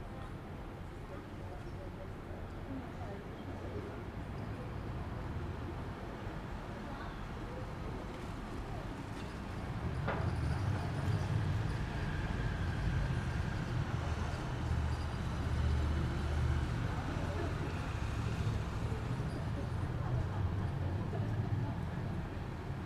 Arnulfspl., Regensburg, Deutschland - Mittagsstimmung am Arnulfsplatz
Stimmen, Strassengeräusche. Aufnahme aus dem Fenster eines Apartments im 4. Stock am Arnulfsplatz.
Regensburg, Germany, 31 May 2019, 16:22